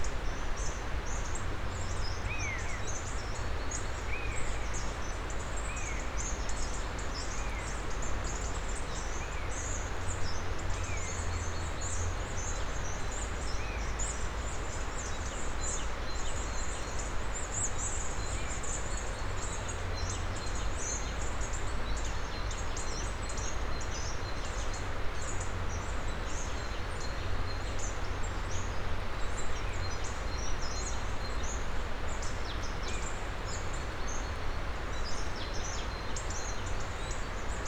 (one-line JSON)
{"title": "Kerkerbachtal, Hofen, Runkel, Deutschland - forest ambience, Kerkerbach river sound", "date": "2022-02-07 13:35:00", "description": "Kerkerbachtal between villages Hofen and Eschenau, late Winter, early spring birds, sound of the Kerkerbach creek\n(Sony PCM D50, Primo EM272)", "latitude": "50.43", "longitude": "8.17", "altitude": "181", "timezone": "Europe/Berlin"}